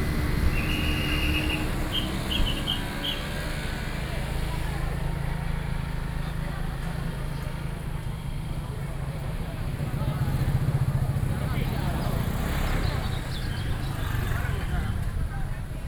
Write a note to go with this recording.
Walking through the traditional fair parade, Traffic Sound, Binaural recordings, Sony PCM D50